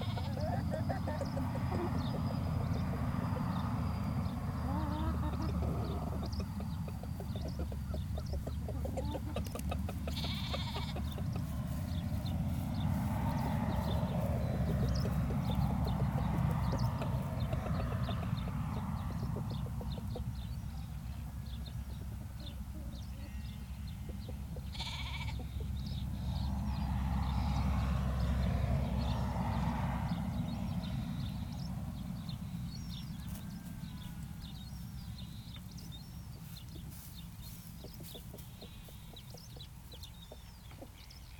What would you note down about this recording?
This is a recording featuring lambs, a sheep and some chickens that live on the Burland Croft Trail; an amazing place run by Tommy and Mary Isbister. Tommy and Mary have been in Trondra since 1976, working and developing their crofts in a traditional way. Their main aim is to maintain native Shetland breeds of animals, poultry and crops, and to work with these animals and the environment in the tried-and-tested ways that sustained countless generations of Shetlanders in the past. The Burland Croft Trail is open all summer, and Mary and Tommy were incredibly helpful when I visited them, showing me around and introducing me to all their animals and also showing me some of the amazing knitwear produced by both Mary, Tommy and Mary's mothers, and their daughter, showing three generations of knitting and textile skill within one family.